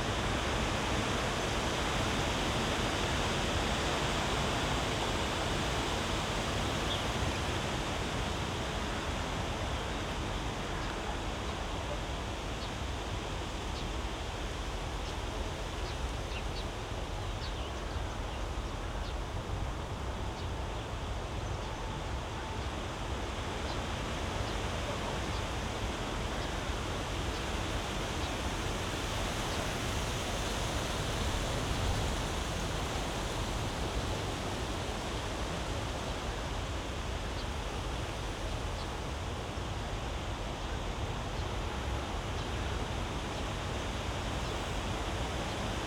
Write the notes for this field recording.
place revisited: spring Sunday early evening, (SD702, AT BP4025)